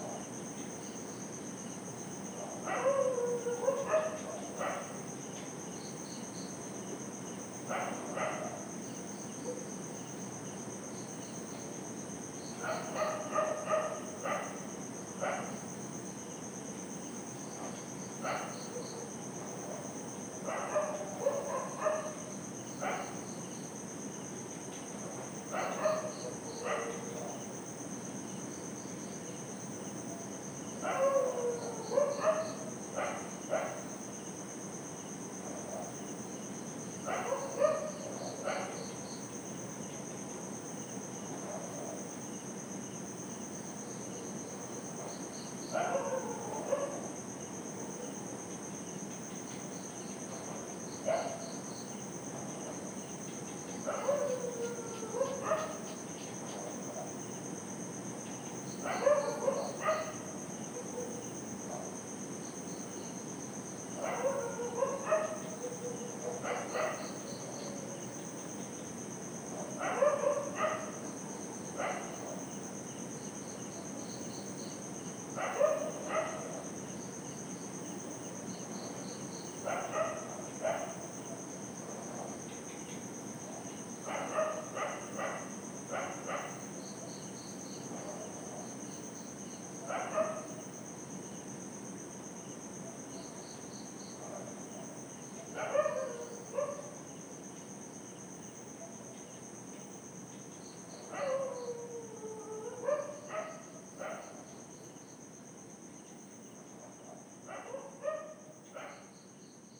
Unnamed Road, Fatrade, Cavelossim, Goa, India - 22 Sleepless tropics
Sleepless night recordings - dogs barking, cicadas etc...